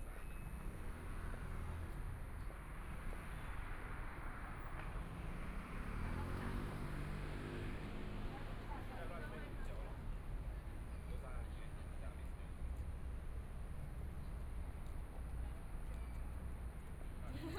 中山區成功里, Taipei City - walking on the Road
walking on the Road, Environmental sounds on the street, Traffic Sound
Please turn up the volume
Binaural recordings, Zoom H4n+ Soundman OKM II
Zhongshan District, Taipei City, Taiwan, 16 February 2014, 18:53